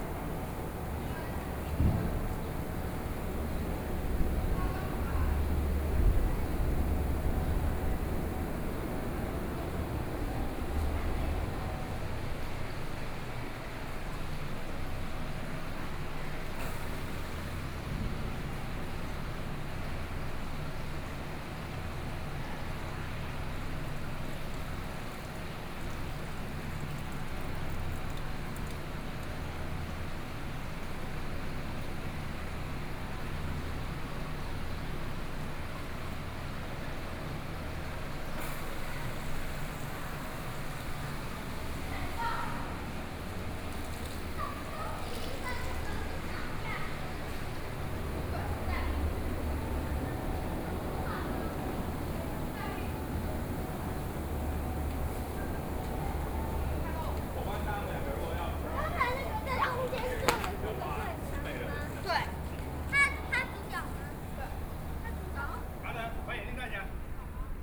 員山鄉內城村, Yilan County - Air conditioning noise
Sightseeing, Air conditioning noise
Sony PCM D50+ Soundman OKM II